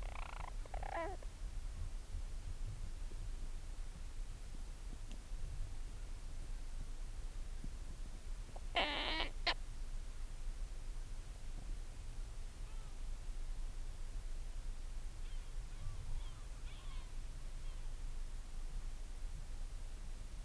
Marloes and St. Brides, UK - european storm petrel ...
Skokholm Island Bird Observatory ... storm petrel calls and purrings ... lots of space between the calls ... open lavalier mics clipped to sandwich box on bag ... calm sunny evening ...
Haverfordwest, UK, 16 May